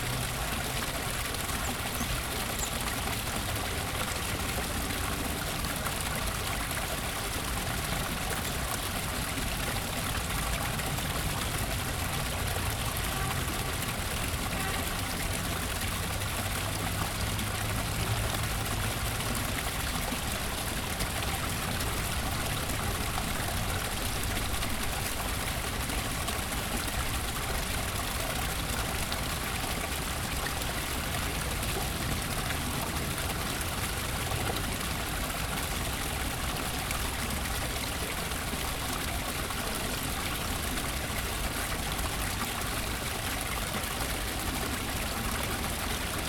Musée Albert-Kahn, Rue du Port, Boulogne-Billancourt, France - Albert Kahn's Garden 3
recorded w/ Zoom H4n